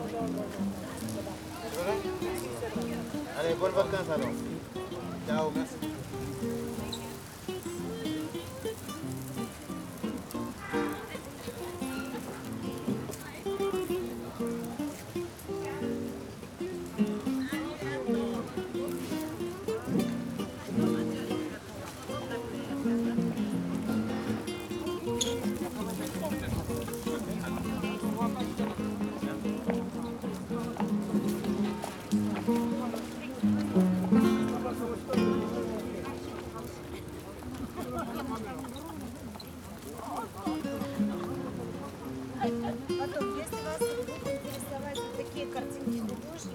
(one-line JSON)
{"title": "Lisbon, Largo Portas do Sol, an observation deck - man playing guitar", "date": "2013-09-26 14:58:00", "description": "a man playing guitar on an observation deck among tourists. another man blatantly trying to sell cheep bracelets and lavalieres. gusts of wind.", "latitude": "38.71", "longitude": "-9.13", "altitude": "44", "timezone": "Europe/Lisbon"}